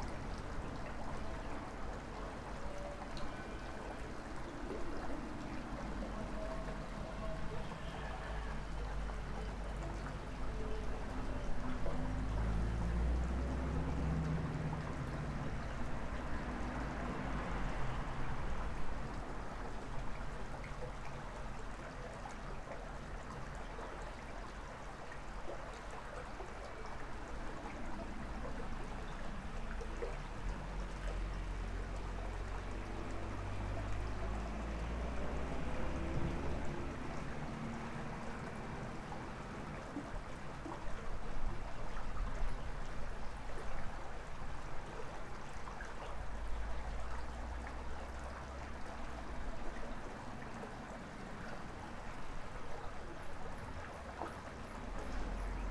Utena, Lithuania, under the bridge
27 July, 12:20